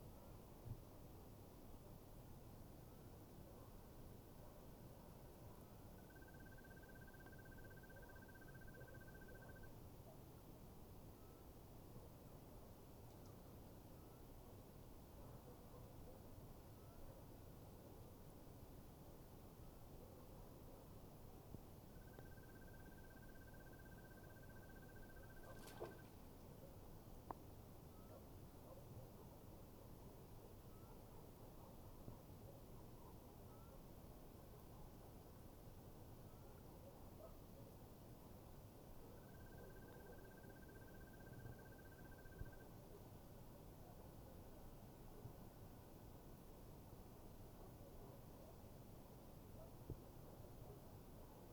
SP, Santa Maria di Licodia CT, Italy - Night ambiente
first evening without wind. storm is still
22 March, 19:59